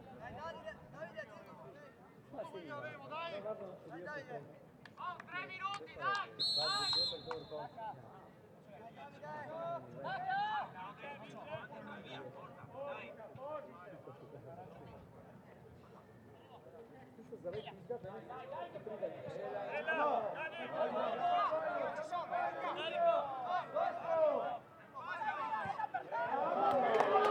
Duino-Aurisina TS, Italien - Duino-Aurisina - End of local soccer game
Local soccer game (Campionale regionale dilettanti, promozione girone B) between Sistiana Duino Aurisina (hosts) and Domio (guests). The game started at 4pm. Domio wins 2:1, no goals during the recording.
[Sony PCM-D100 with Beyerdynamic MCE 82]